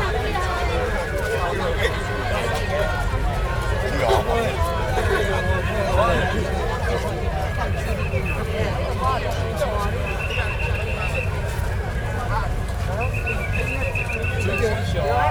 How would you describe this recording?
Ketagalan Boulevard, Rode NT4+Zoom H4n